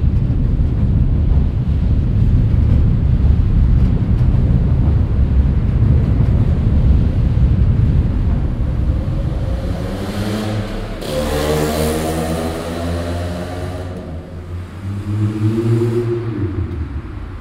stereofeldaufnahmen im mai 08 - mittags
project: klang raum garten/ sound in public spaces - in & outdoor nearfield recordings
venloerstrasse, bahnunterführung, 8 May, 20:52